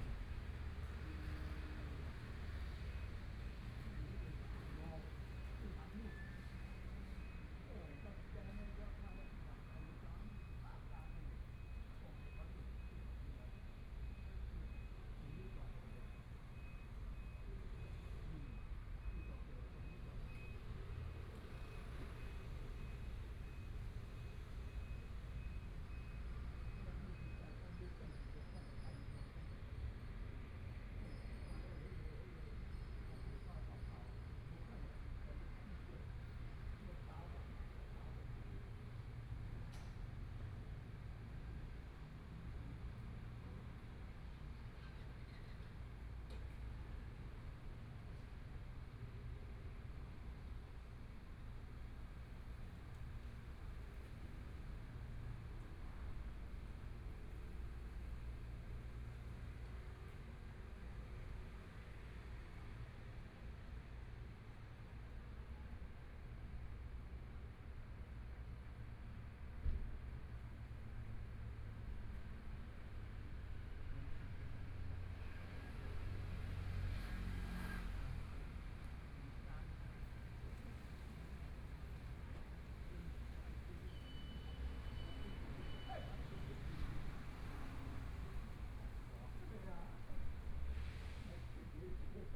Baoting Art and Culture Center - Small Square
Traffic Sound, Dialogue among the elderly, Binaural recordings, Zoom H4n+ Soundman OKM II ( SoundMap2014016 -11)
16 January 2014, 15:04